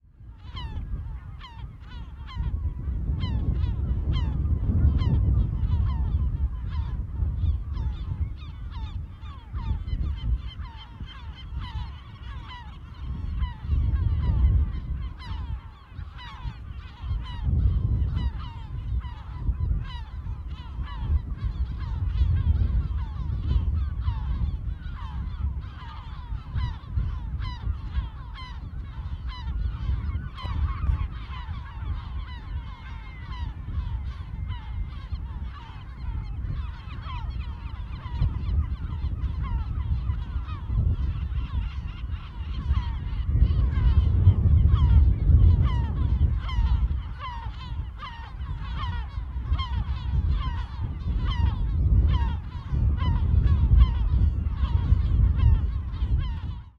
Natural reserve in Berlenga Island, Portugal. Seagulls on a windy day.
The island of Berlenga is 40 minutes by sea from the near town of Peniche (Portugal). It hosts thousands of Seagulls, as part of a Natural reserve program.
Peniche, Portugal, 5 July, 14:30